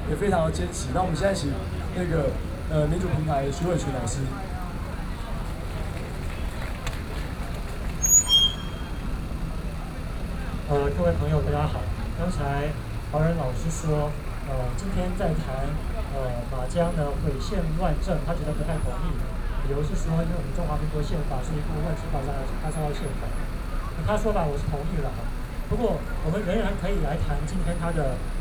Zhongzheng District, Taipei - Protest
Speech, University professors and students gathered to protest, Sony PCM D50+ Soundman OKM II
Taipei City, Taiwan, 9 October